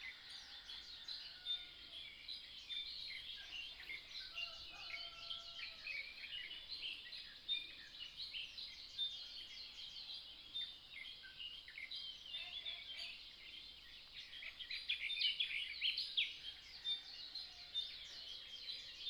綠屋民宿, 桃米里Puli Township - Birdsong
Birdsong, Chicken sounds, Early morning, at the Hostel
Nantou County, Taiwan, 29 April